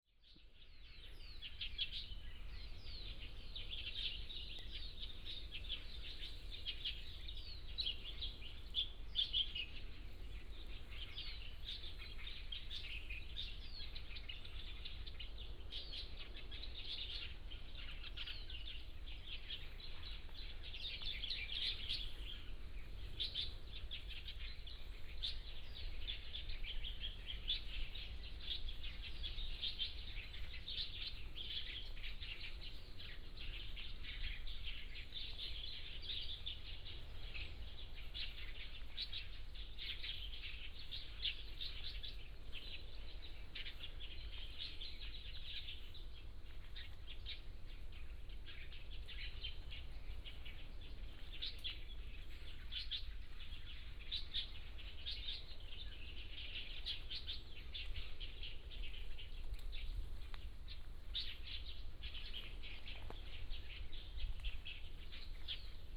林投村, Huxi Township - Birds singing
in the woods, Birds singing, Sound of the waves
Husi Township, 澎20鄉道